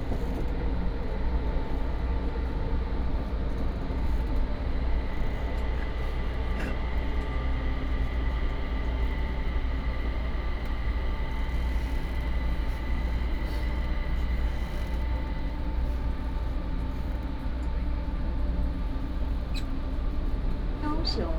三民區, Kaoshiung City - KMRT
from Aozihdi station to Kaohsiung Main Station